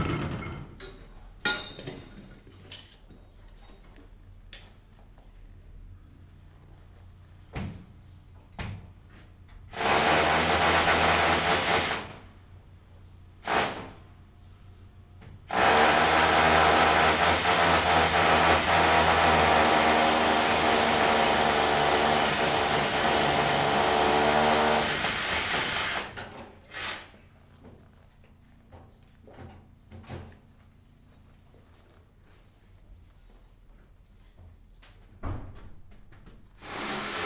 Berlin Bürknerstr., backyard window - scaffolders working in front of my window
radio aporee backyard window, 3.7.2008, 9:00, scaffolders working in front of my window (open).